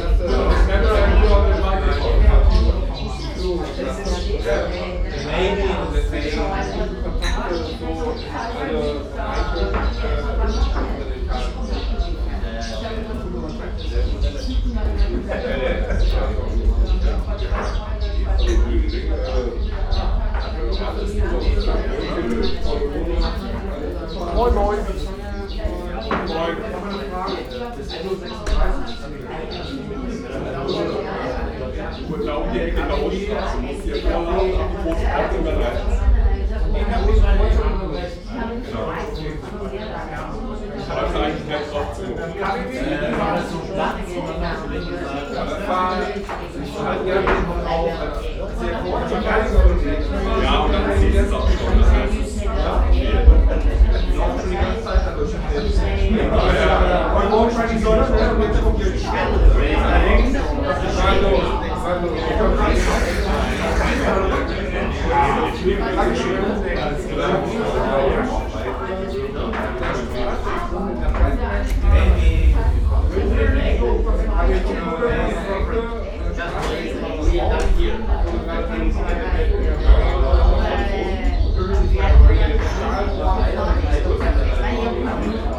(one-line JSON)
{"title": "berlin, manteuffelstraße: club - the city, the country & me: exibition of heiner weiss", "date": "2015-03-22 00:18:00", "description": "field recordings and photo exibition of heiner weiss\nthe city, the country & me: march 22, 2015", "latitude": "52.50", "longitude": "13.43", "altitude": "40", "timezone": "Europe/Berlin"}